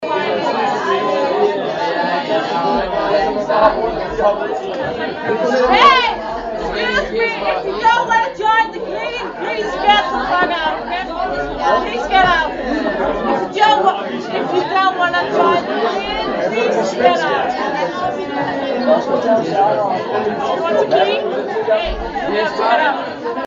but how to get rid of a drunken crowd?
Region Hovedstaden, Danmark